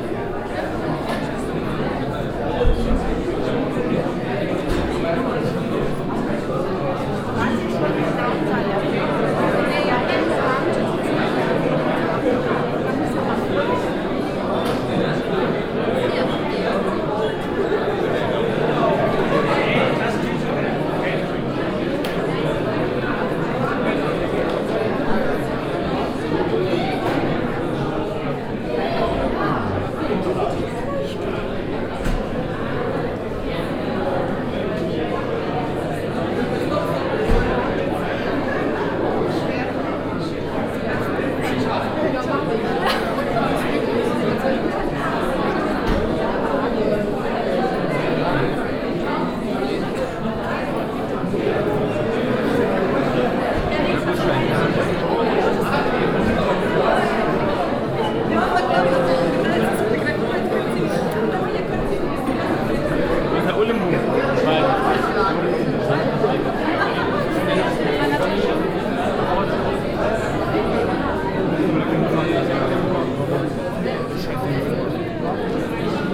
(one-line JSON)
{"title": "essen, lichtburg theatre", "date": "2011-06-08 23:39:00", "description": "Im Foyer des traditionellem Kinos, welches in diesem Moment gefüllt ist mit Besuchern, die an einer Photo Wettbewerbs Vernissage teilnehmen.\nInside the foyer of the traditional cinema. Here crowded with visitors of a photo contest vernissage.\nProjekt - Stadtklang//: Hörorte - topographic field recordings and social ambiences", "latitude": "51.45", "longitude": "7.01", "altitude": "87", "timezone": "Europe/Berlin"}